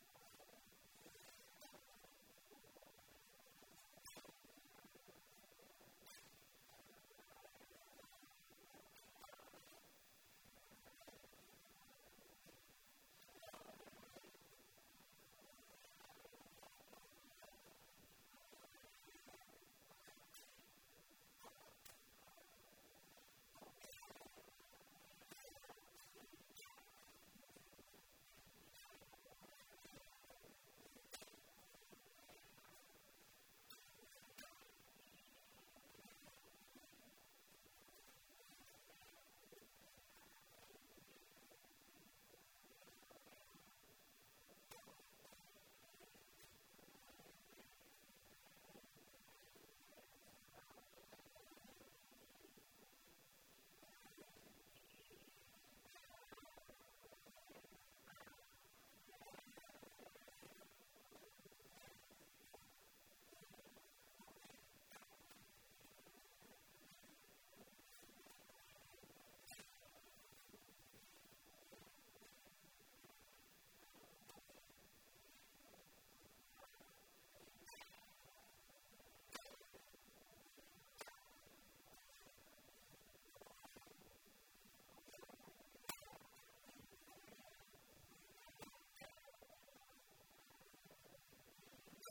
Kolhapur, Motibag Thalim, Kushti
India, Maharashtra, Kolhapur, Kushti, traditional Indian wrestling, In India, wrestling takes place in a clay or dirt pit. The soil is mixed with ghee and other things and is tended to before each practice.
Traditional Indian wrestling isn just a sport - its an ancient subculture where wrestlers live and train together and follow strict rules on everything from what they can eat to what they can do in their spare time. Drinking, smoking and even sex are off limits. The focus is on living a pure life, building strength and honing their wrestling skills.
Wrestlers belong to gyms called akharas, where wrestlers live under strict rules. Wrestlers diets consist of milk, almonds, ghee, eggs and chapattis and each wrestler has a job to do in preparing meals. The sport is on the decline, but there are still many akharas left and some dedicated people who are working to keep this ancient part of Indian culture alive.